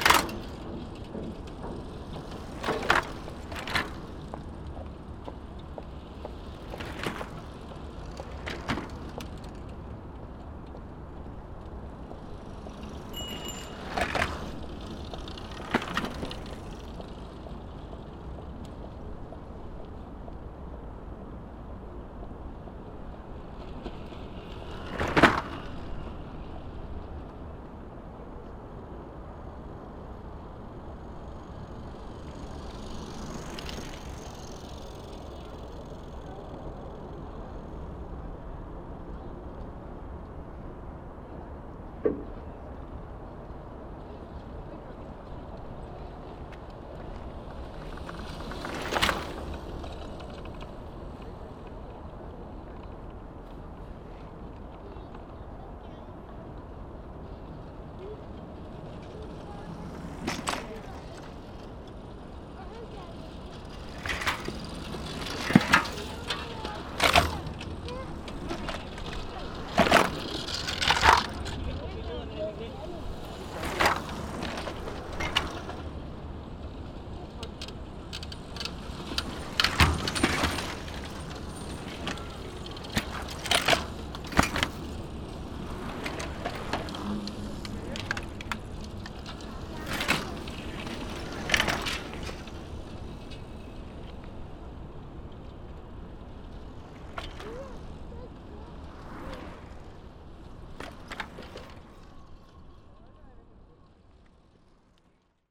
København, Denmark - Denmark, country of the bikes
During the rush hour, no need to search the cars, there's very few. In Copenhagen, the rush hour is simply a downpour of bikes. It's of course very pleasant. Sound of the bikes on a pedestian and cycling bridge.